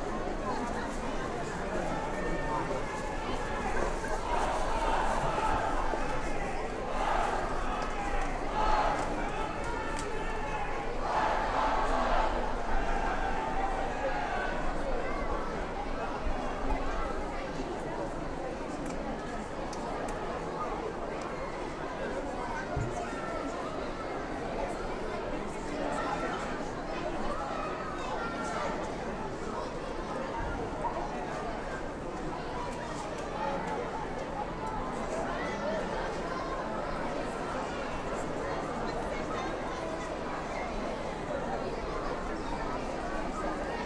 Recorded on Falmouth University Field Trip with students from Stage 2 'Phonographies' module:
Soundfield SPS200 recorded to Tascam DR-680, stereo decode
Cornwall, UK, 5 March